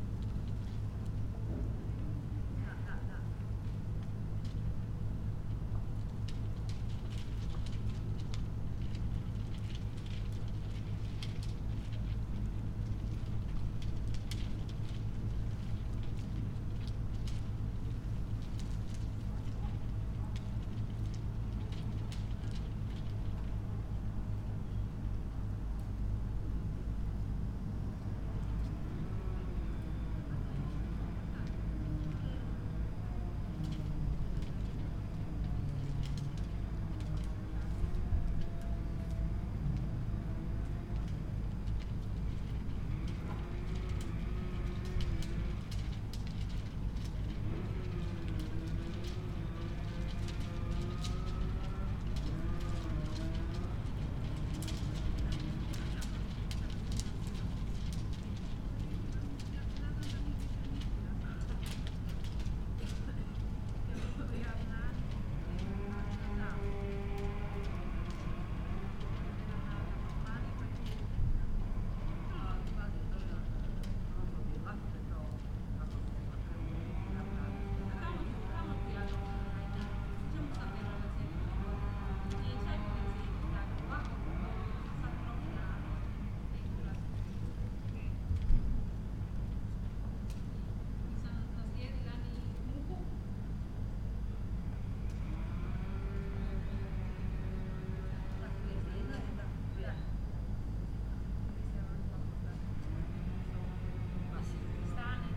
tree with strips, Grožnjan, Croatia - winter
winter sonic ambience in small istrian town, tree with tiny strips all-over tree crown, trunks sawing as typical identifier of the season